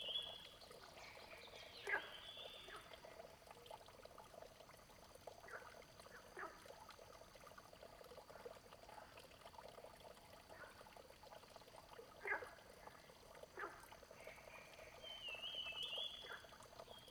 Flow, Bird sounds, Frogs chirping, Firefly habitat area, Dogs barking
Zoom H2n MS+XY